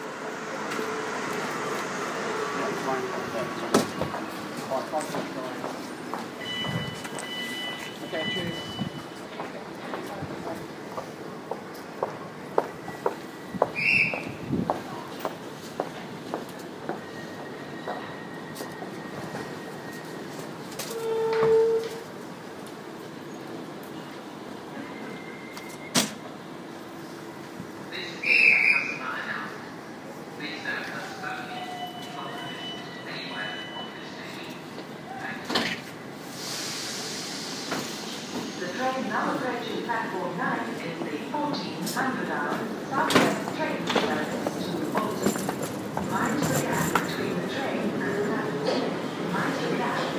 London Borough of Wandsworth, Greater London, UK - Clapham Junction Station
Waiting for the South West Train to Weymouth.
13 March 2015